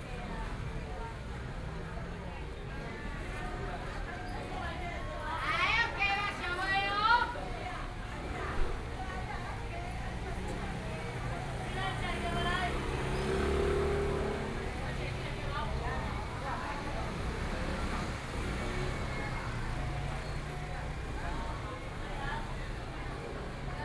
Qingshui St., Tamsui Dist. - Cries the market
Selling chicken sounds, Standing in front of convenience stores, The traffic sounds, Binaural recordings, Zoom H6+ Soundman OKM II
Danshui District, New Taipei City, Taiwan, November 2013